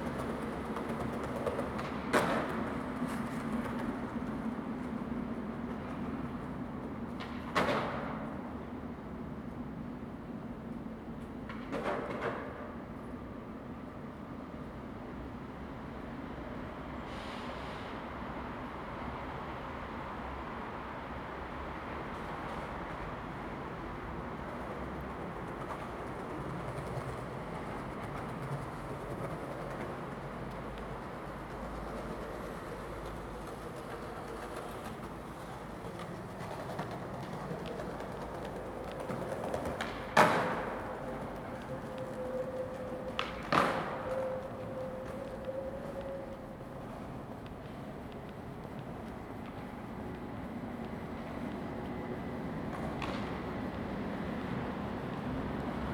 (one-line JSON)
{"title": "Národní, Praha-Nové Město, Czechia - Noon bells from the Saint Voršila monastery on the Václav Havel square", "date": "2020-03-22 12:01:00", "description": "The bell from the turret of the nearby monastery of Saint Voršila sounded today unusually clear, accompanied by a steady rumbling of a lonesome skater and sometimes intervened by deep humming of almost empty trams.", "latitude": "50.08", "longitude": "14.41", "altitude": "200", "timezone": "Europe/Prague"}